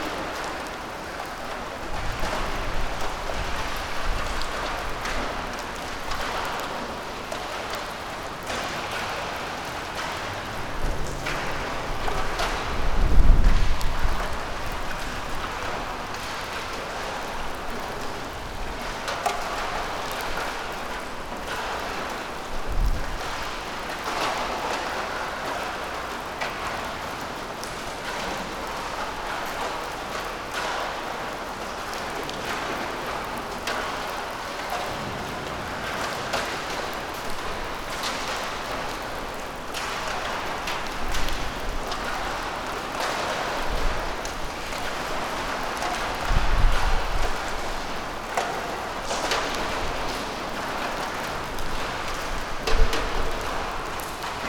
dropping ambience - abandoned, spacious hall in Trieste old port, roof full of huge holes, in- and outside rain and winds ...
Trieste, Italy